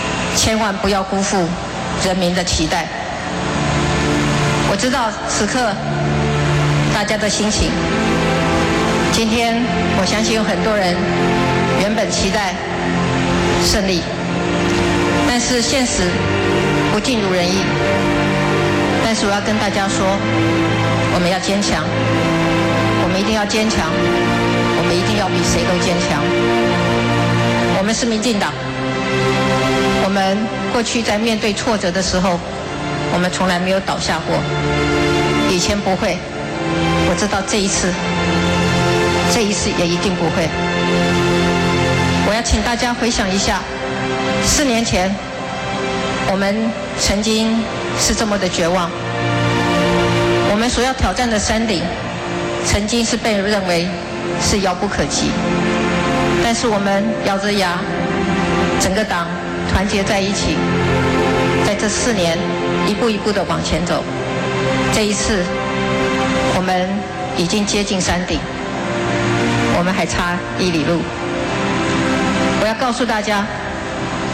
Taiwan's presidential election, Concession speech, Sony ECM-MS907, Sony Hi-MD MZ-RH1
新北市 (New Taipei City), 中華民國